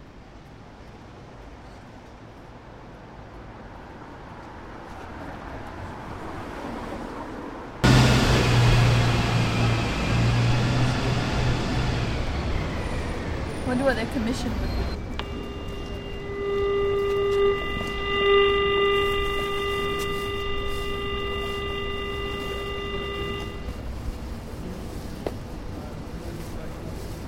Above the Kingsway Telephone Exchange - London, (Above the Kingsway Telephone Exchange)
20 July 2009, 21:43